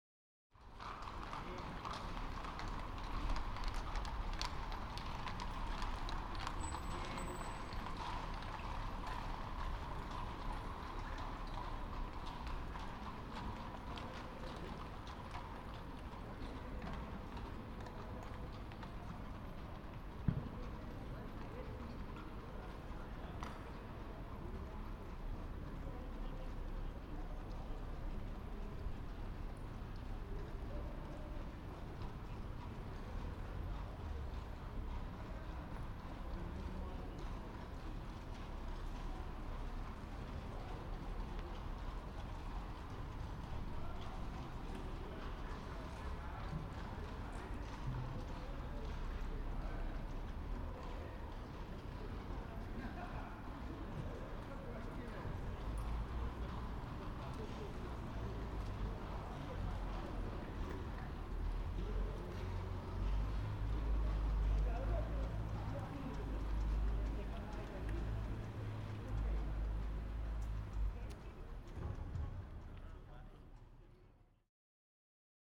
Horse-drawn carriages on Stephansplatz.